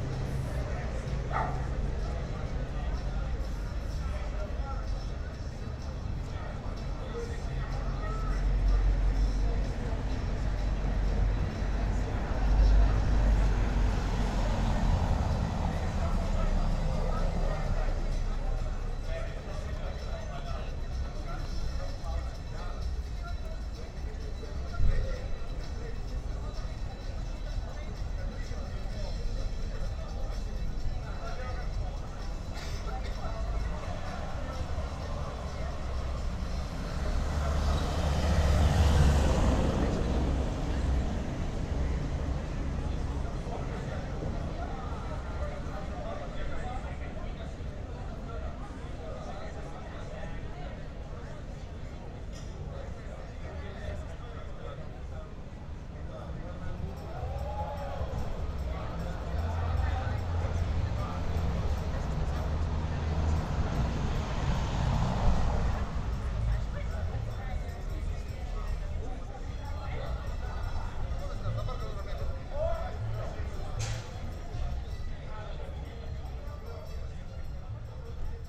Vilnius, Lithuania, at Uzupis Angel sculpture
A sculpture of an angel was placed in the central square of Užupis ("art" part of Vilnius). The bronze angel, has become the symbol of Užupis. Just standing at the sulpture and listening...